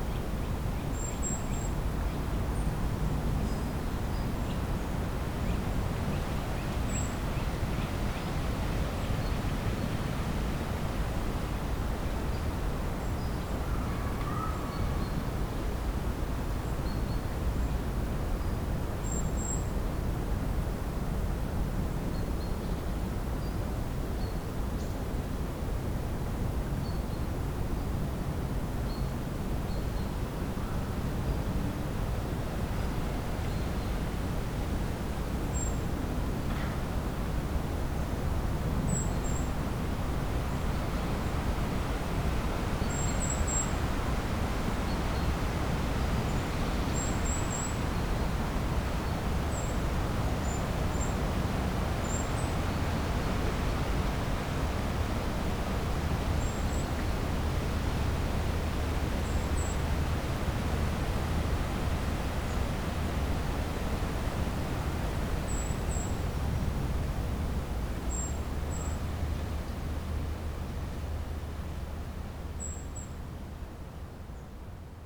berlin, grunewald: friedhof grunewald-forst - the city, the country & me: grunewald forest cemetery
at the grave of christa päffgen (better known as "nico"), looks like a haunted place
stormy afternoon, trees swaying in the wind
the city, the country & me: august 25, 2013
Berlin, Germany